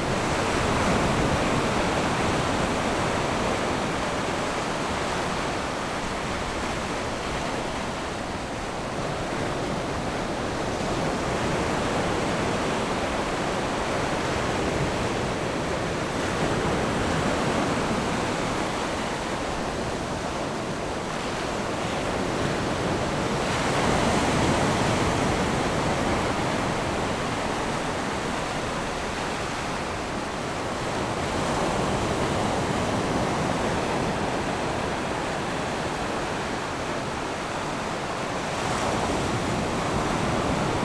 Chaikou Diving Area, Lüdao Township - Diving Area
Waves and tides, Diving Area
Zoom H6 XY+ Rode NT4
Taitung County, Taiwan, 31 October 2014